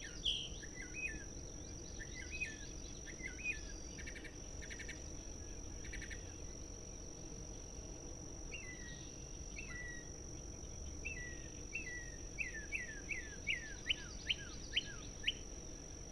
Maurice River, NJ, USA - the mimic
Mockingbird on a roll
fostex fr2le and at3032 omnis
11 June, 18:00